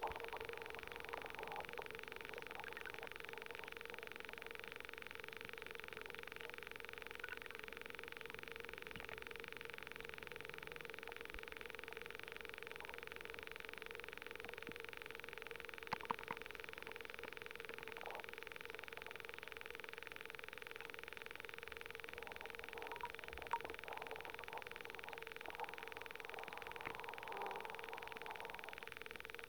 Utena, Lithuania, underwater machanica
hydrophone recording in the swamp. have no idea about the source of the sound. and even there you can hear the sound of traffic:)